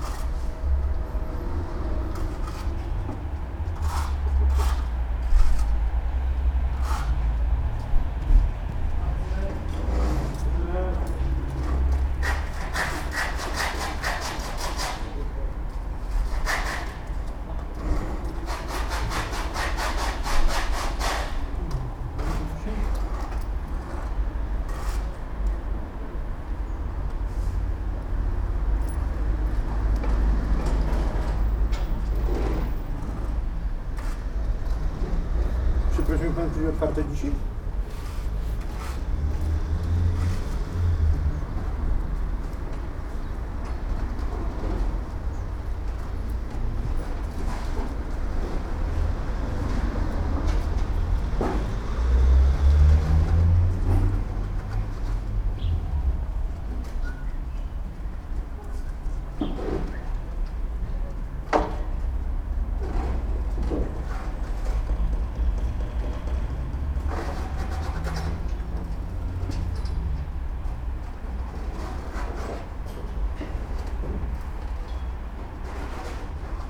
construction workers renovating side of a building and installing insulation panels. i went towards this place intrigued by chirps of a bird that you can hear throughout the recording. a man walks up to me asking if a pet store is open on Saturday. (Roland R-07 internal mics)